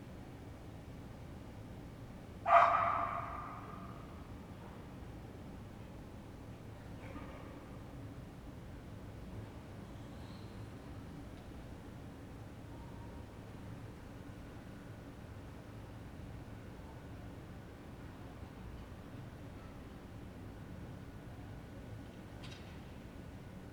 Ascolto il tuo cuore, città. I listen to your heart, city. Several chapters **SCROLL DOWN FOR ALL RECORDINGS** - Three ambiances in the time of COVID19 Soundscape
"Three ambiances in the time of COVID19" Soundscape
Chapter XXVIII of Ascolto il tuo cuore, città. I listen to your heart, city
Monday March 30 2020. Fixed position on an internal terrace at San Salvario district Turin, twenty days after emergency disposition due to the epidemic of COVID19.
Three recording realized at 2:00 p.m., 5:00 p.m. and 8:00 p.m. each one of 4’33”, in the frame of the project Ambiance Confinement, CRESSON-Grenoble research activity.
The three audio samplings are assembled here in a single audio file in chronological sequence, separated by 5'' of silence. Total duration: 13’50”